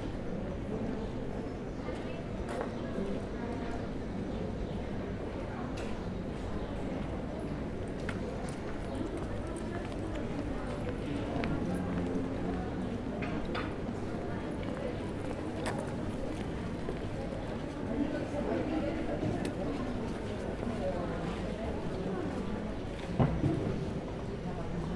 Estepona, streets with little shops
sitting on a bench in a street with little shops, people passing by, relaxed athmosphere
31 March 2011, 11:14